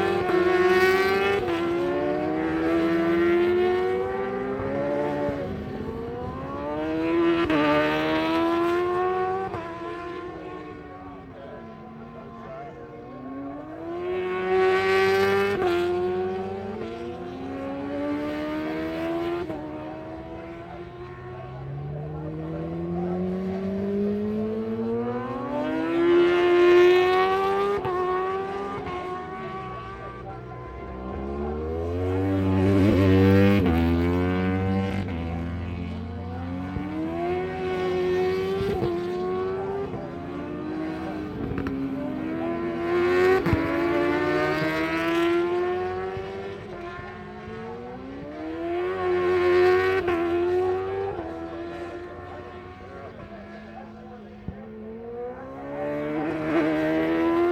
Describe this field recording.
british superbikes 2006 ... superbikes free practice ... one point stereo mic to minidisk ...